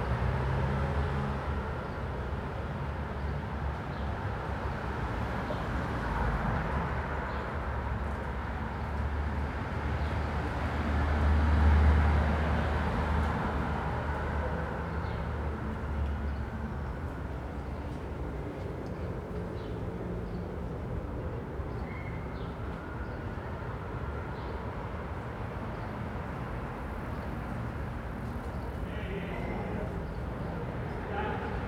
Pl. Popocatépetl, Hipódromo, Cuauhtémoc, Ciudad de México, CDMX, Mexiko - Soundsignature Pipe
A mobile street vendor with a potato grill using the hot air from his mobile oven to power his sound signature.
27 April